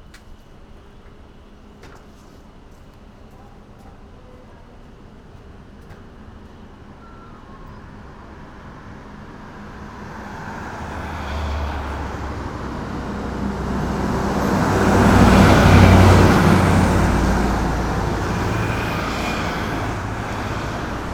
Sec., Longmi Rd., Bali Dist., New Taipei City - Traffic Sound
Traffic Sound
Binaural recordings, Sony PCM D50 +Soundman OKM II
New Taipei City, Taiwan